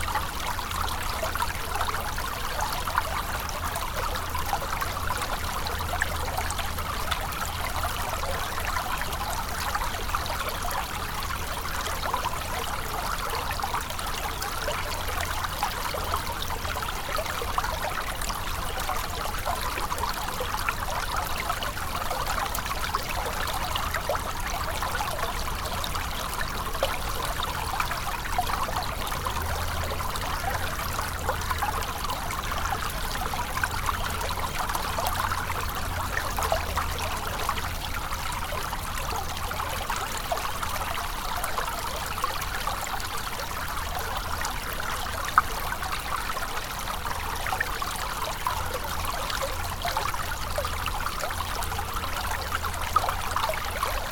Genappe, Belgique - The ry d'Hez river
A small river into the woods, in a very beautiful and bucolic place.
Court-St.-Étienne, Belgium, 19 February, 13:00